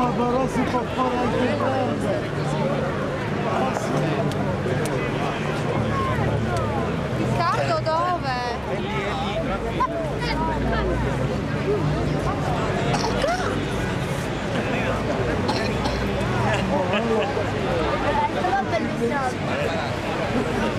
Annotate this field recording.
orchestrals protesting for own rights in front of their theatre, 20/03/2009